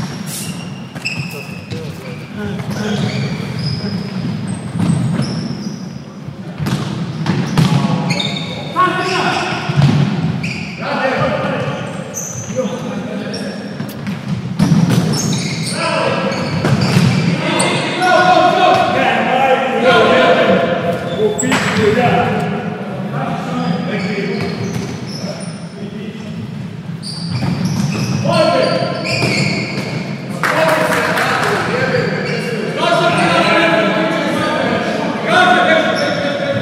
maj, Belgrade - Fudbalska sala (Football hall)
15 June